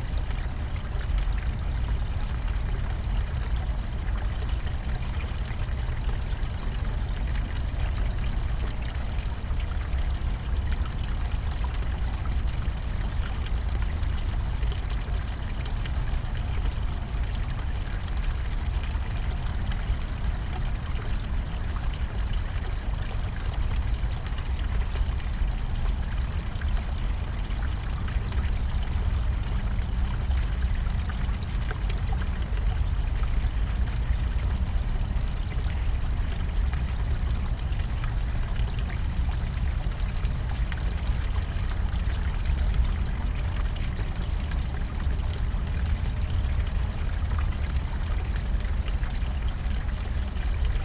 Tivoli park, Ljubljana - fountain, water flow
small water stream flows through a basin, probably coming from the Rožnik hill rising behind the park.
(Sony PCM D50, DPA4060)